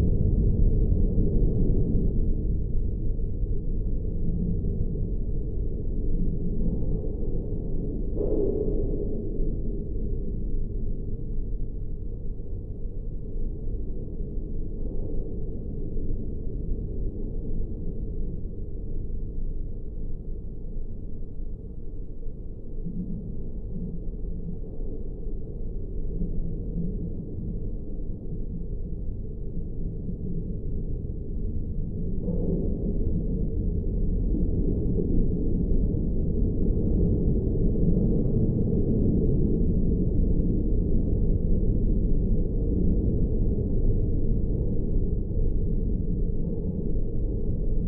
Aglona, Latvia, metallic construction at basilica
the abandoned metallic stage construction at Aglona's basilica. The stage was used at Pope's visit. Geophone recording in windy day...
Latgale, Latvija, 30 July 2020